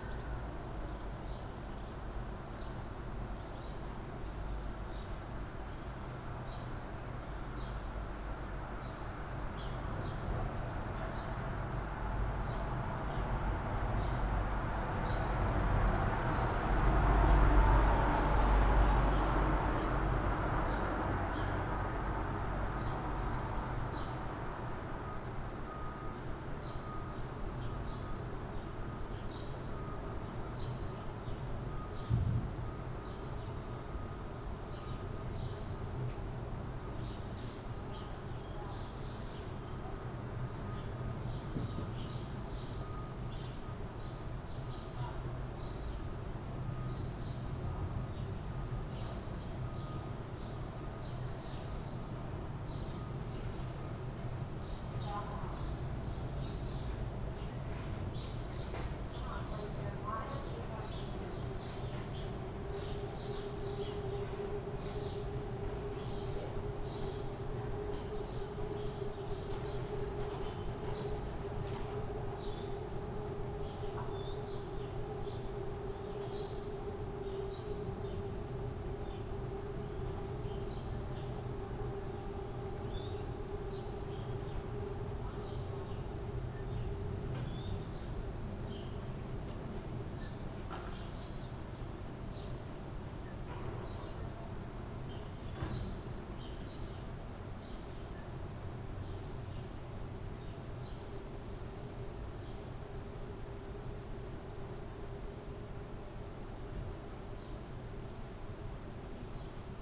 Scharnhorststraße, Berlin, Германия - 3rd Floor Living Room
Sunny morning of February 2020. 3rd Floor. Living room. Berlinale period of time.
Recorded on Zoom H5 built-in X/Y stereo microphone.
Deutschland, 22 February 2020